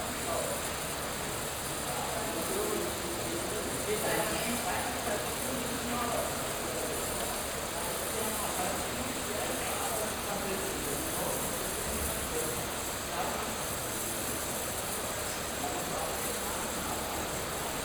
in the sauna, footsteps in wet sandals, sounds of the bar
soundmap d: social ambiences/ listen to the people - in & outdoor nearfield recordings
Rebstock Bad, Sauna, 9 May 2009, ~22:00